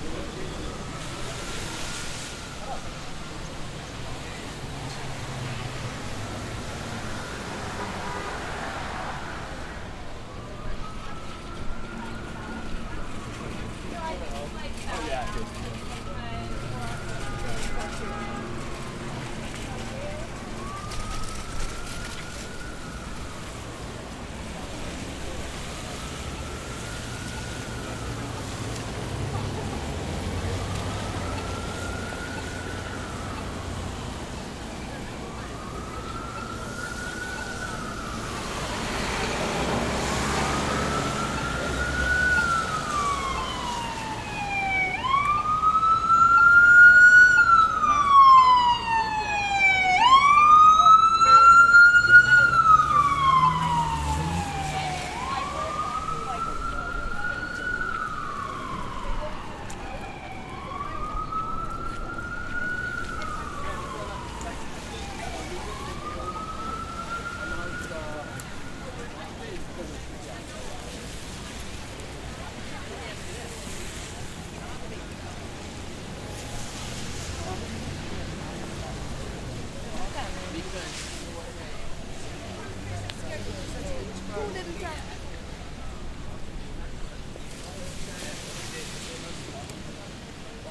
Lexington Ave, New York, NY, USA - Escaping MTA
Exiting Lexington Ave/59 street subway station.
Zoom H6